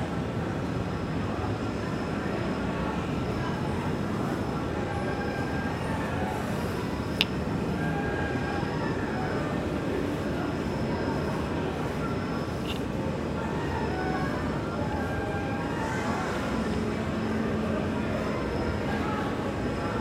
Carrera, Medellín, Aranjuez, Medellín, Antioquia, Colombia - Tarde Udea